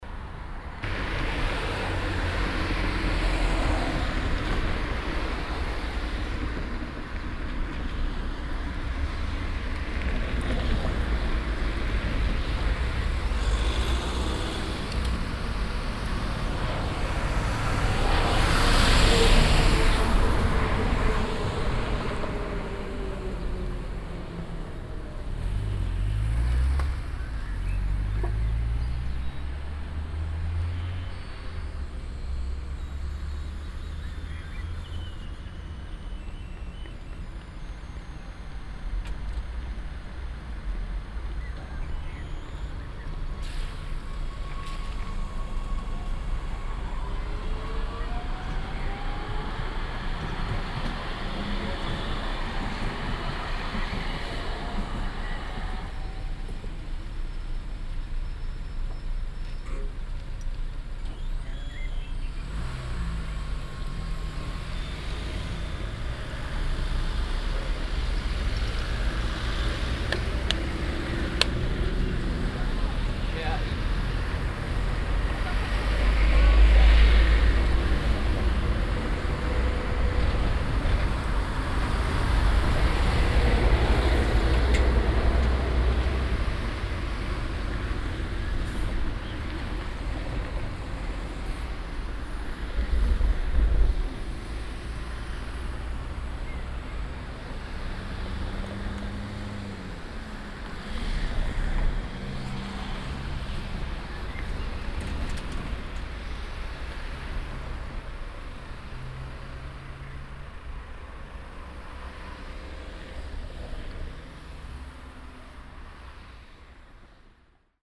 refrath, vürfelser kaule, bahnschranke - refrath, vürfelser kaule, bahnschranke, bahn 02
strassenverkehr mittags, schliessen der bahnschranken, abfahrt der bahn, öfnnen der bahnschranken, verkehr
soundmap nrw - social ambiences - sound in public spaces - in & outdoor nearfield recordings